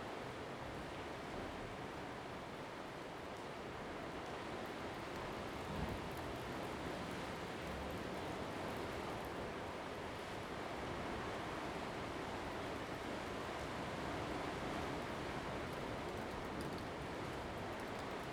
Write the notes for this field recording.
Next to the cave, In the road, Traffic Sound, sound of the waves, Zoom H2n MS +XY